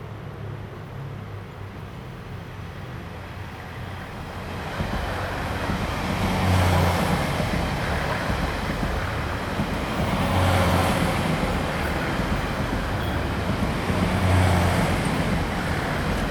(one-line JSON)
{"title": "Sec., Dongmen Rd., East Dist., Tainan City - Train traveling through", "date": "2017-01-31 13:39:00", "description": "Next to the railway, Traffic sound, Train traveling through\nZoom H2n MS+XY", "latitude": "22.99", "longitude": "120.21", "altitude": "28", "timezone": "Asia/Taipei"}